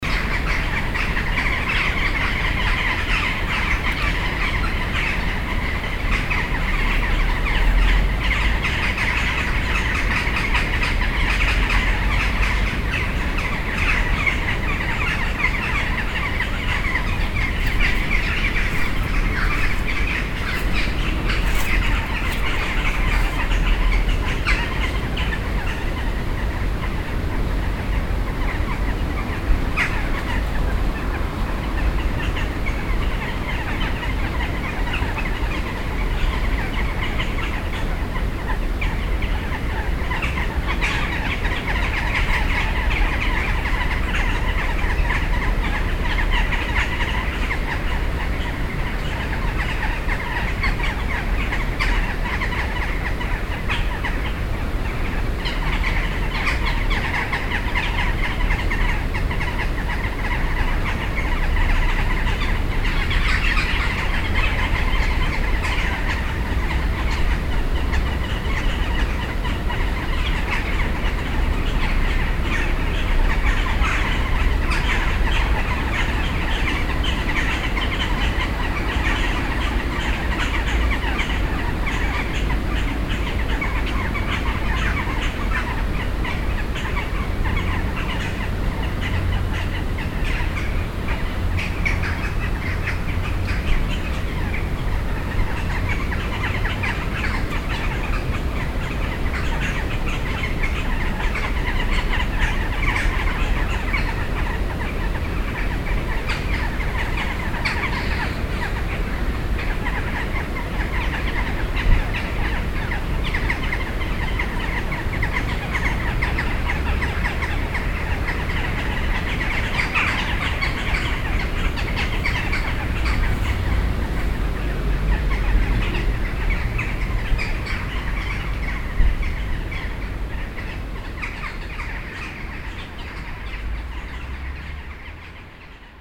lippstadt, friedrichstraße, crows tree
in the evening. crows gathering in two trees along the silent water arm of the lippe river
soundmap nrw - social ambiences and topographic field recordings